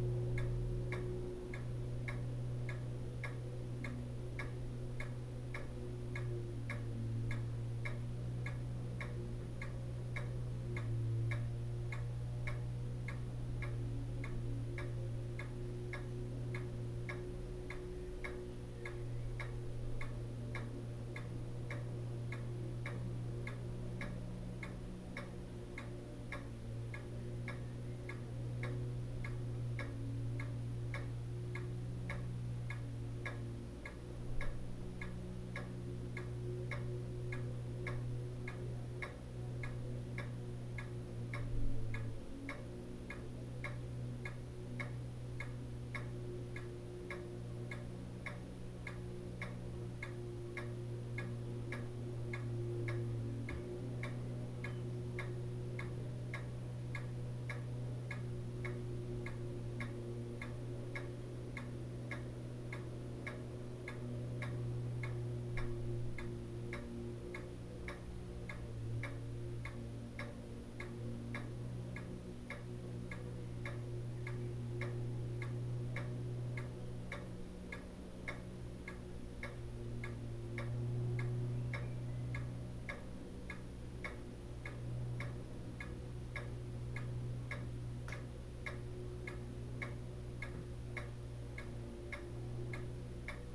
front room ambience ... recorded with olympus ls 14 integral mics ... ticking of a wall mounted pendulum clock ... my last visit to what was our family home ... my brother and myself had spent sometime together clearing the remnants ... here's to jack and babs ... no sadness in our memories of you ... bless you folks ...